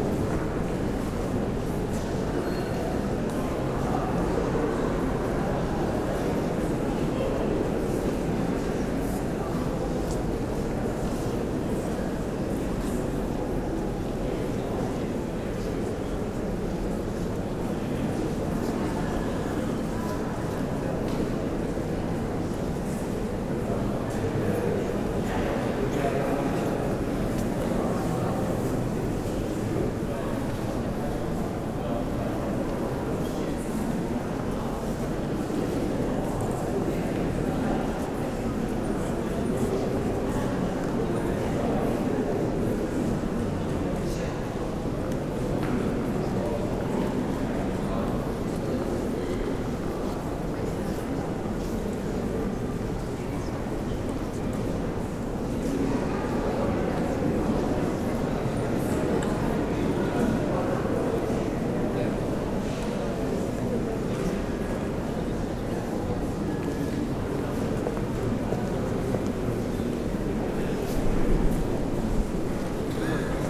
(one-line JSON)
{"title": "berlin, potsdamer straße: neue nationalgalerie - the city, the country & me: new national gallery", "date": "2012-04-26 15:00:00", "description": "audience during the exhibition \"gerhard richter panorama\"\nthe city, the country & me: april 26, 2012", "latitude": "52.51", "longitude": "13.37", "altitude": "34", "timezone": "Europe/Berlin"}